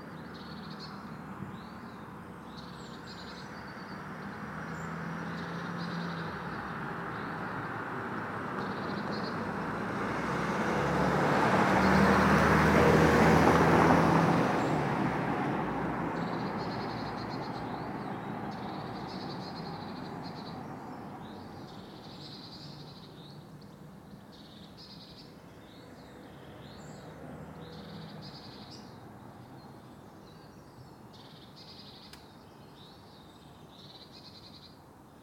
Contención Island Day 61 inner west - Walking to the sounds of Contención Island Day 61 Saturday March 6th
The Drive
Two runners
two cars
one plane
In the sunshine
a blue tit calls
and a nuthatch responds
Pushed by tree roots
the kerb stones
billow down the street
6 March 2021, 09:05